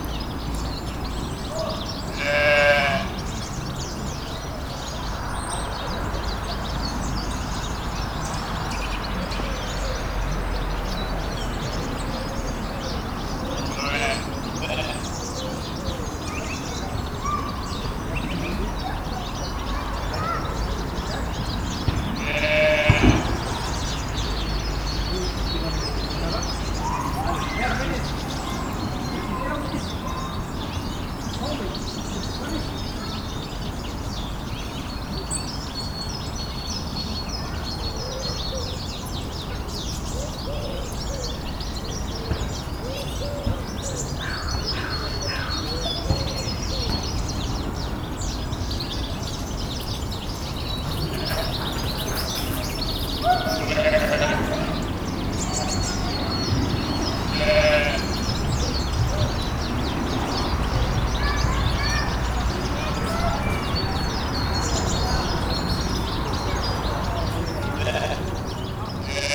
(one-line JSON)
{"title": "Quartier du Biéreau, Ottignies-Louvain-la-Neuve, Belgique - Spring mood", "date": "2016-03-13 14:15:00", "description": "In a forest, birds singing, sheep bleat and kids have fun.", "latitude": "50.66", "longitude": "4.61", "altitude": "133", "timezone": "Europe/Brussels"}